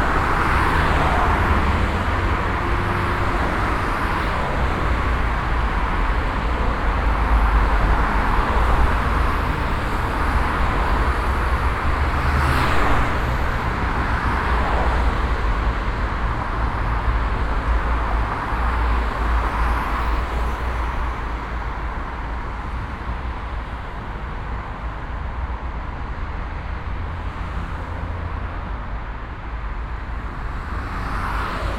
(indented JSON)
{
  "title": "essen, berne street, traffic",
  "date": "2011-06-08 21:54:00",
  "description": "At the Berne street on a small green island - Traffic passing by from both directions.\nProjekt - Klangpromenade Essen - topographic field recordings and social ambiences",
  "latitude": "51.46",
  "longitude": "7.02",
  "altitude": "80",
  "timezone": "Europe/Berlin"
}